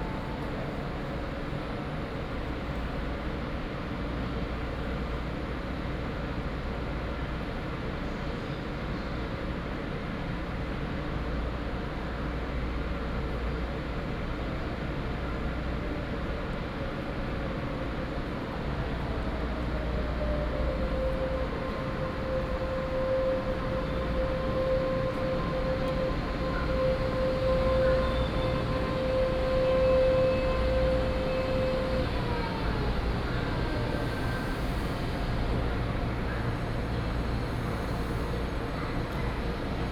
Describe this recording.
Station Message Broadcast, At the station platform